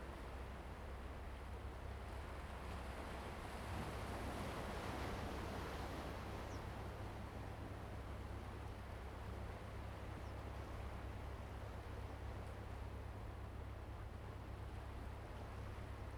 新湖漁港, Jinhu Township - On the bank
On the bank, Waves and tides
Zoom H2n MS+XY
福建省, Mainland - Taiwan Border, 3 November 2014, 16:16